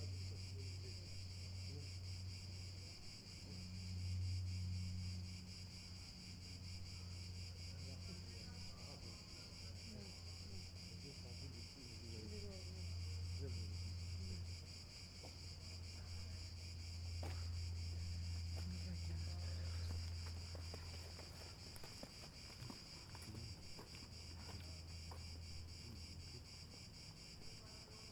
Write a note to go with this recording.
I sat on a wall lining one of the paths to the top of Strefi Hill and pressed record. The sound of people talking, a flute?, dogs barking, but most prominently an unusual sounding bird.